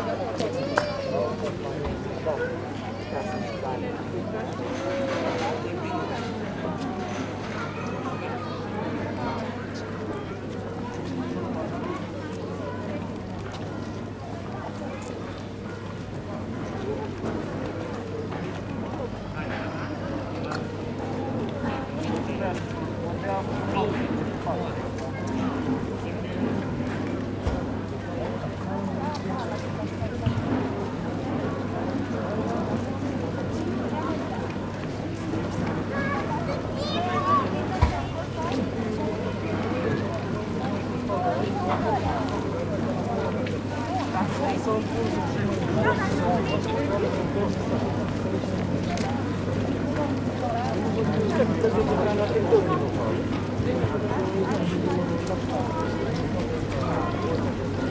Strada Michael Weiss, Brașov, Romania - 2020 Christmas in Brasov, Transylvania, Crowded Main Street
A crowded main street on Christmas. In the distance a church bell rings for the hour. Recorded with Superlux S502 Stereo ORTF mic and a Zoom F8 recorder.